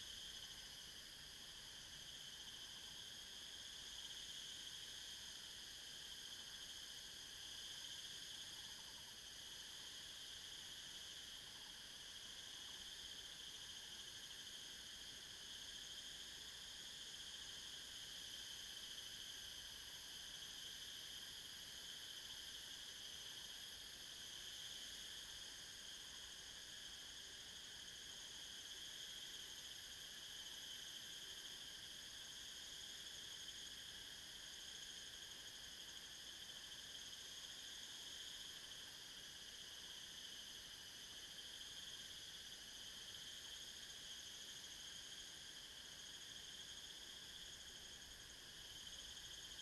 紹雅產業道路, Xinxing, Daren Township - Dangerous mountain road
Dangerous mountain road, Bird call, The sound of cicadas
Zoom H2n MS+XY
Daren Township, Taitung County, Taiwan, 13 April 2018, 15:42